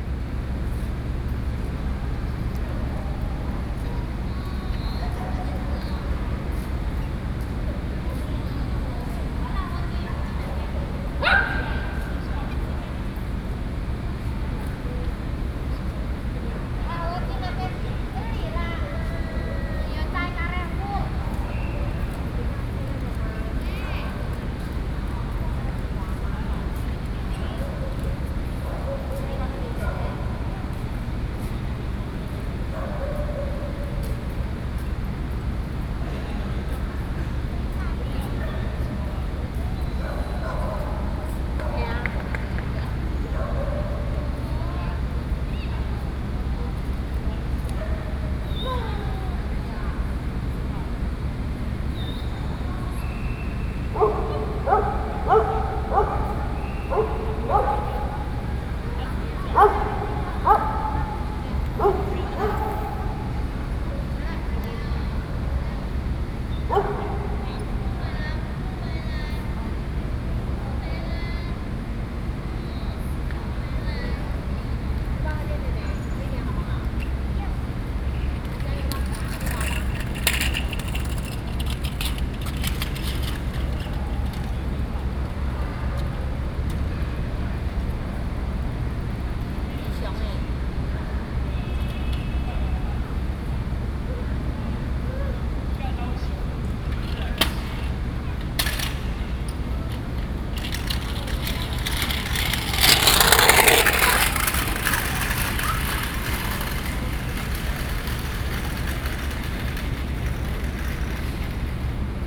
Xuecheng Rd., Sanxia Dist., New Taipei City - In the Plaza
In the Plaza Community, Traffic Sound, Child, Dogs barking
Binaural recordings, Sony PCM D50+Soundman okm